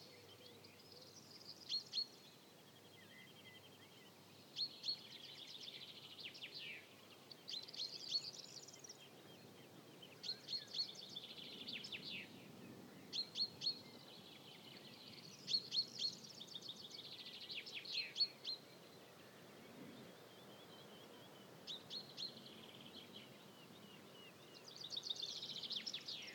very calm place. actually the sound level was about 32-35 dB in the evening. now it is a little bit noisier, distant traffic and planes from Bilunda airport...
Danmark, 3 April 2022, 08:10